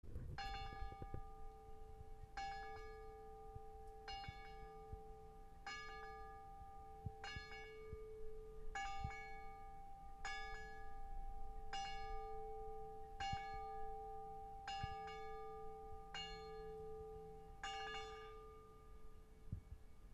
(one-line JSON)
{"date": "2008-06-13 18:53:00", "description": "midnight bells from church in Rabstejn nad Strelou, czech: kostelni zvon na Rabstejne odbiji pulnoc -----June 2008", "latitude": "50.04", "longitude": "13.29", "altitude": "469", "timezone": "Europe/Prague"}